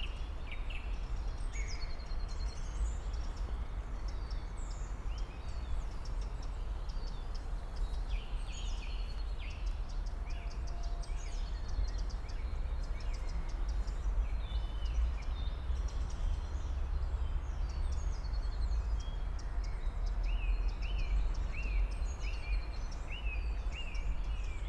Schloßpark Buch, Berlin - Schlossparkgraben, water outflow, ambience
Berlin, Schlosspark Buch. The water of river Panke feeds two ponds in the park, which is a natural reserve (Naturschutzgebiet) together with the nearby Buch forest.
(Sony PCM D50, DPA4060)
27 March 2019, 09:00